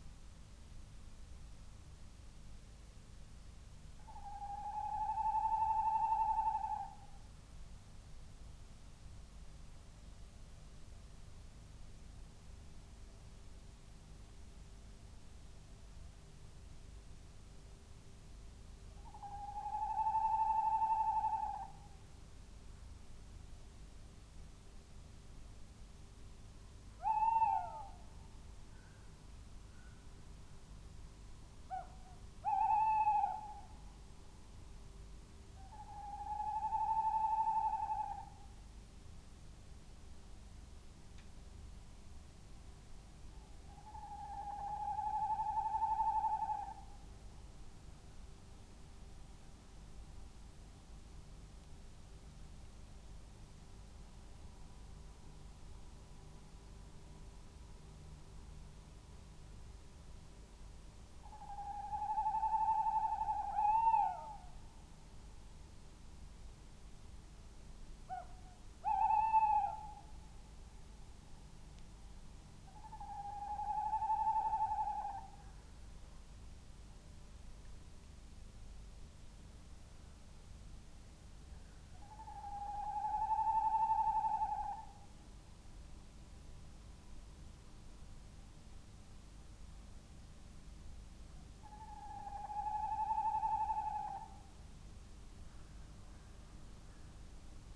tawny owls calls and approaching thunderstorm ... xlr SASS on tripod to Zoom H5 ... bird calls ... pheasant ... little owl ... red-legged partridge ... there is clipping ... unattended recording ... first real thunderclap at 17:20 ... still don't know why low level rumbles set the pheasants calling ... not one or two ... most of them ... 0